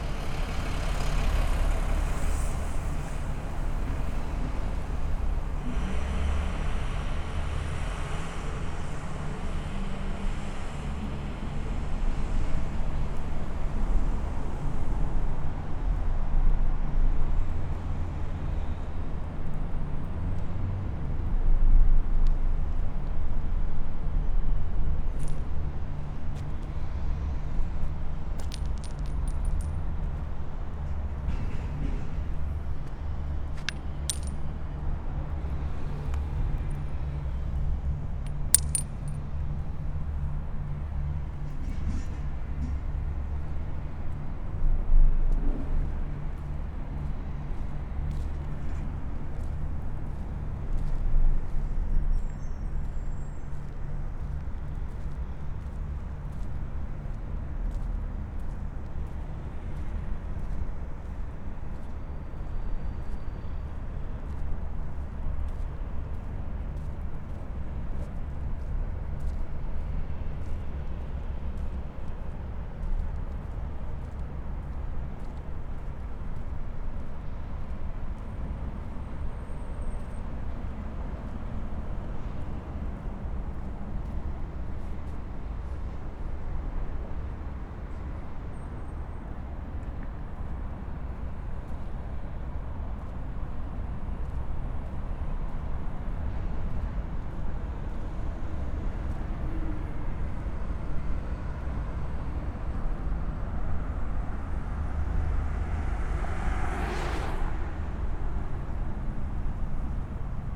Montreal: Autoroute 40 Spaghetti Junction - Autoroute 40 Spaghetti Junction
equipment used: M-Audio Microtrack Stereo Cardoid Mic
I walked around the overpass, taking note of the traffic, the drops of water from overhead, and the majestic pigeons.
QC, Canada